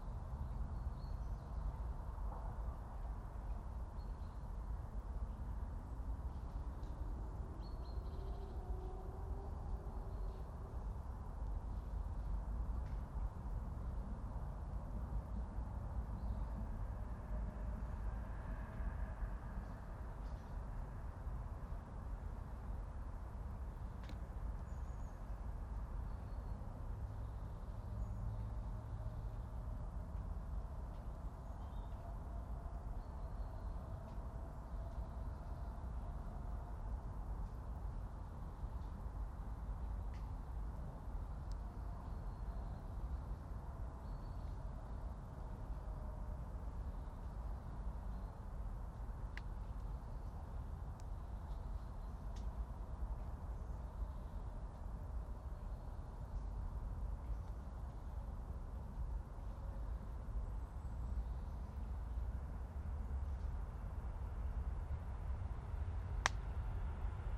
Rain, trains, clangy bells, autumn robin, ravens, stream from the Schöneberger Südgelände nature reserve, Berlin, Germany - Train thumps and crackles
Early morning just after sunrise. A cold and clear Sunday, slightly frosty. The atmosphere is still, the rain has stopped, wildlife is silent, the city very distant. Every two or three minutes the quiet is punctuated by powerful train moving fast. Some seem to leave a trail of harsh sharp crackling in their wake. I've not heard this sound before and don't know what it is - maybe electrical sparks on icy cables.